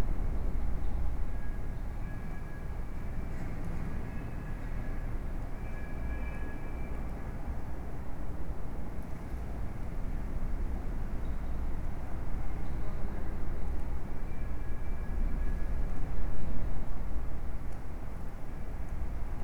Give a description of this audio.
inside Fortalesas church-out strong wind